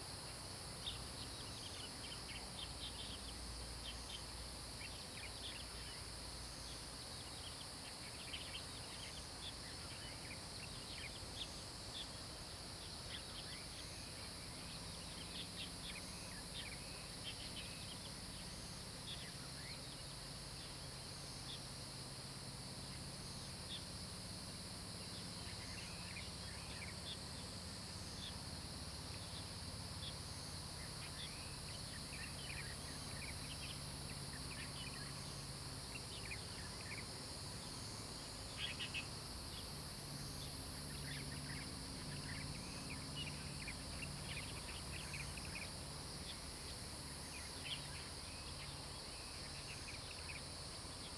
Taomi Ln., Puli Township, Taiwan - Early morning
Early morning, Bird calls
Zoom H2n MS+XY